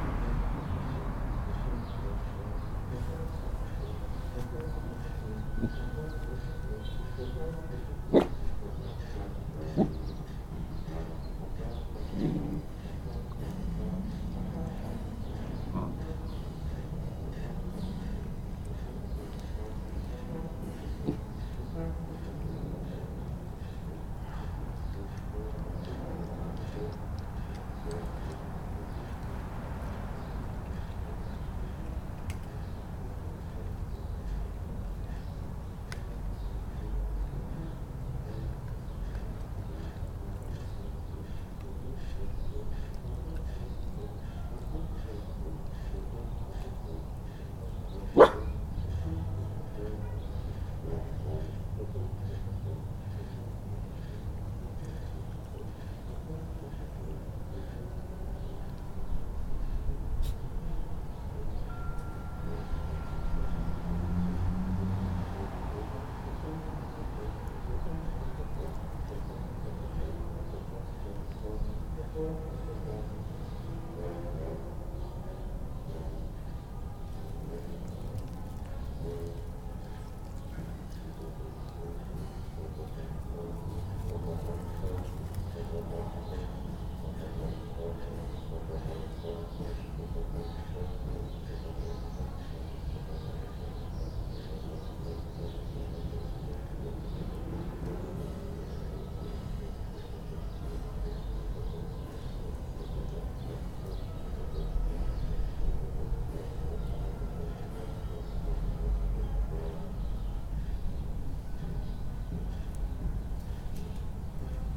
Wood St, Providence, RI, USA - Brass band playing down the street, Rhoda the puppy barking
Backyard ambience with Rhoda the puppy and a brass band playing down the street on this sunny spring Saturday afternoon. Some local car noise but it's a rare time when you can't clearly hear the nearby highway. A few loud, distorted dog barks in this recording, I don't think Rhoda liked the sousaphone very much. Recorded with Olympus LS-10 and LOM mikroUši